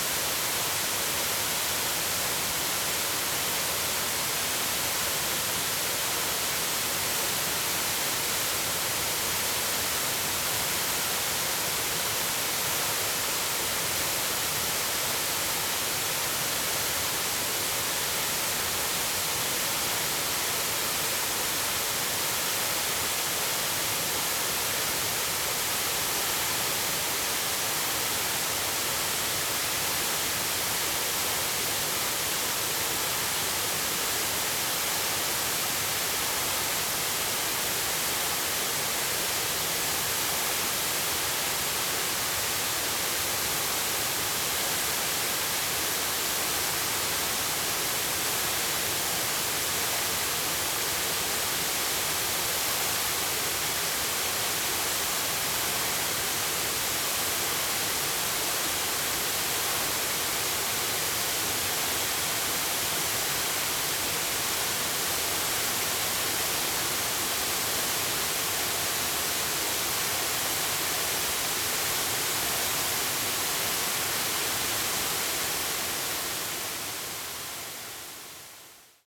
Waterfall
Zoom H2n MS+XY +Sptial Audio